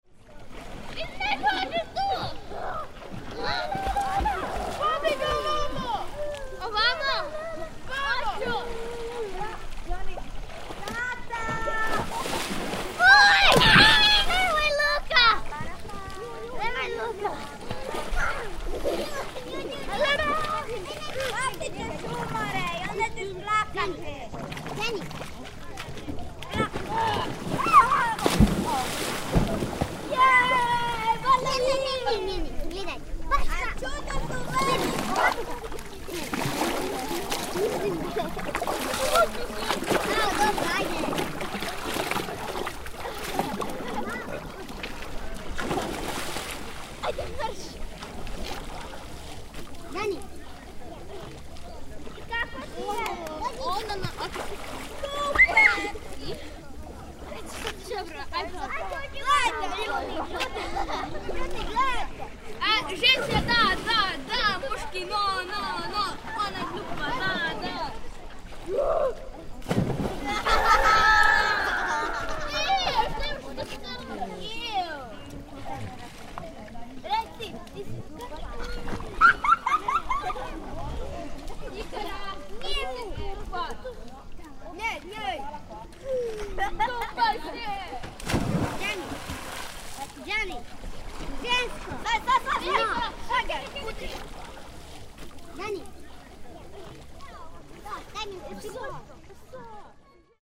Children playing and swimming in the small port of the Island of Rava, Croatia